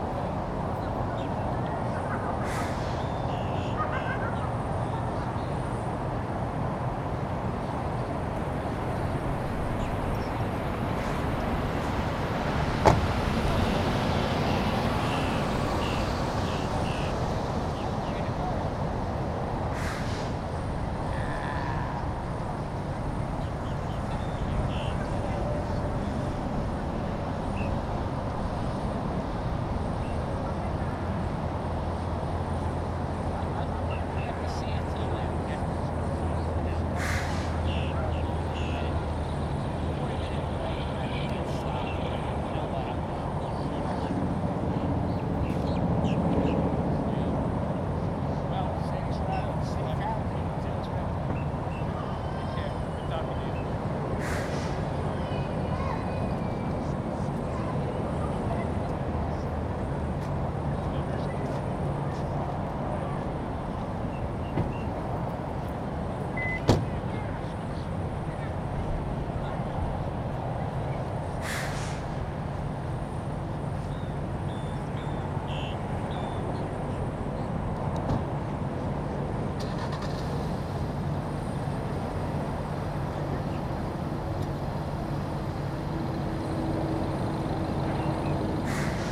Port Wentworth, GA, USA - Georgia Welcome Center
The parking lot of a Georgia welcome center/rest stop. Cars, trucks, birds, and people can all be heard.
[Tascam Dr-100mkiii, on-board uni mics]